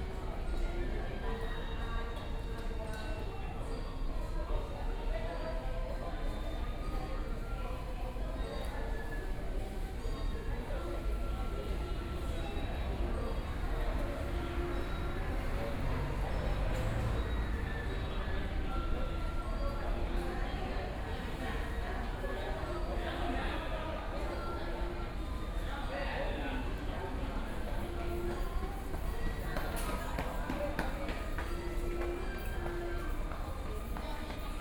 小港區港興里, Kaoshiung City - In the temple plaza
In the temple plaza